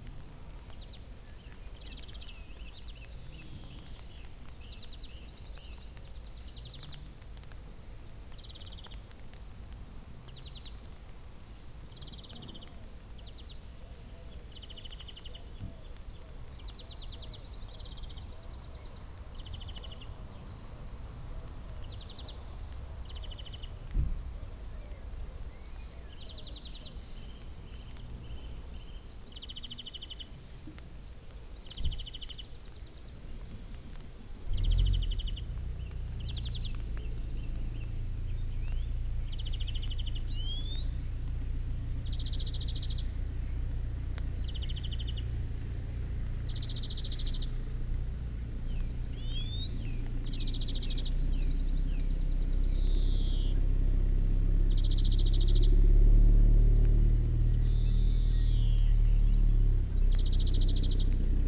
Bridge Villa Camping, Crowmarsh Gifford, Wallingford, Oxfordshire, UK - Dozing and waking in the tent near the A4074
Made this recording in 2010 when I was doing a lot of exploratory walks around the A4074 road, trying to get closer to the landscape which I am often separated from by my car when I am driving on the road there. I did the walk in two parts, starting in Reading, and taking the footpaths around the A road as it is far too dangerous to walk directly on the road the whole way. I camped overnight in Wallingford on the night of the first day of walking, then met Mark early on, and walked the rest of the way into Oxford. I set up my recorder in the tent before I went to sleep, ready to record the early morning birds. Woke up, put it on, then fell back asleep. In this recording I am dozing with the birds and there is an aeroplane, a little light rain, and some tiny snoring. It was close to 8am if I remember rightly. Just recorded with EDIROL R-09 and its onboard microphones. I kept in the part at the end where I wake up and turn off the recorder!
13 June, 08:00